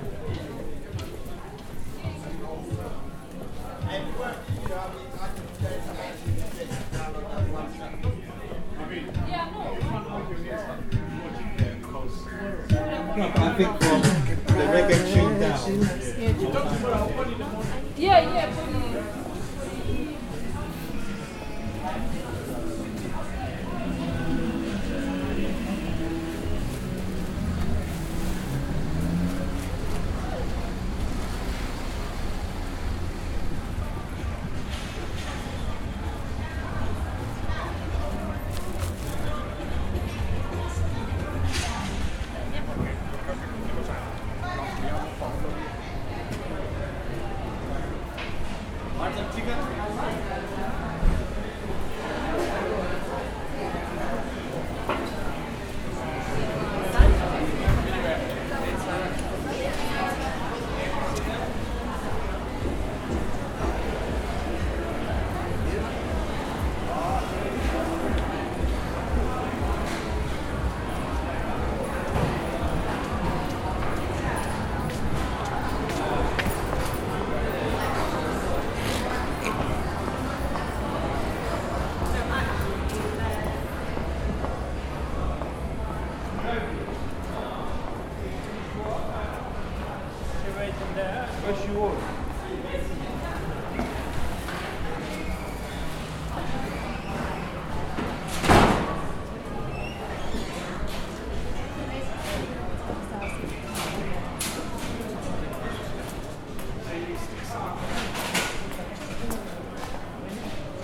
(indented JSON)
{
  "title": "Brixton Village Market, London - Brixton Village/ by Marco de Oliveira",
  "date": "2012-12-24 15:30:00",
  "description": "Walking through Brixton Village on an early afternoon. Brixton has rich of cultures, so you can hear different languages throughout the recording. Butchers, florists, fishmongers, restaurants, cafés, music stands, clothes stands, grocers are just some of commerce active in this place.",
  "latitude": "51.46",
  "longitude": "-0.11",
  "altitude": "14",
  "timezone": "Europe/London"
}